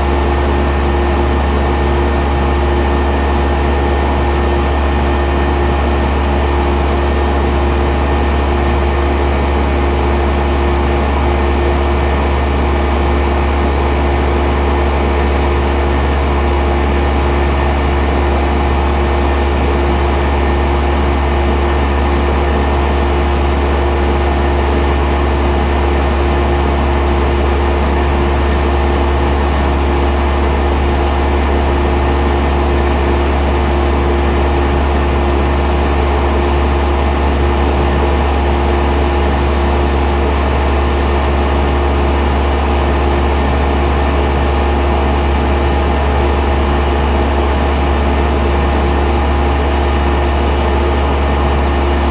Montreal: Landsdowne Ave (Westmount) - Landsdowne Ave (Westmount)
equipment used: Marantz
Landsdowne street apartment building large central air conditioner unit